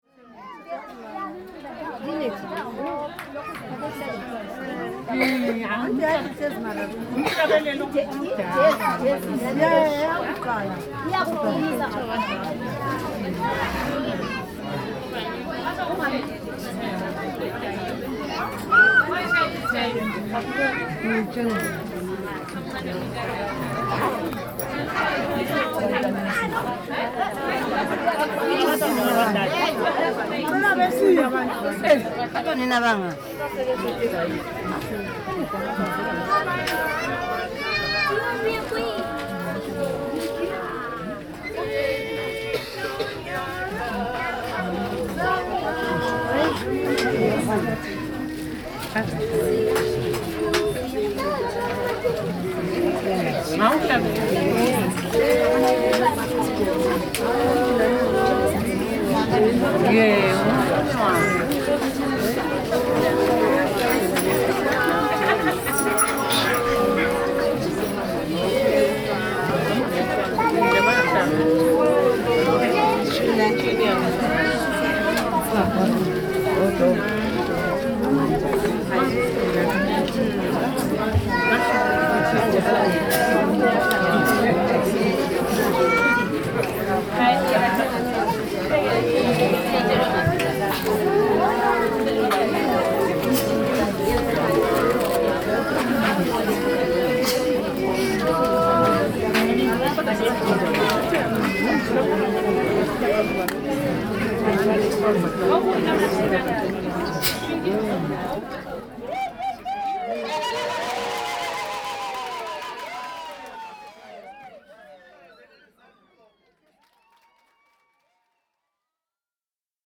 Pumula, Bulawayo, Zimbabwe - A song for the bride...
With these recordings, we are accompanying the writer and filmmaker Joyce Jenje Makwenda to a Wedding in Old Pumula Township of Bulawayo. Joyce is widely acknowledged for her book, film and TV series “Zimbabwe Township Music”; and had recently launched a new major publication of her long standing research: “Women Musicians of Zimbabwe”. One of the much mentioned women pioneers in the book is the Jazz singer Lina Mattaka. The Wedding celebration we attended was of Lina’s neighbours in Old Pumula. Joyce and Lina had planned to contribute to the celebration with the performance of a song… Recording turned out to be not an easy task, since as a third degree guest and foreigner I wished to keep in line. So, what you’re hearing is an audience perspective of the event…
(The main language is Ndebele.)
Joyce says about the song: “…the song is in Shona – Idiko zita raTenzi….. translated to – It is true the name of the Almighty gives us peace…”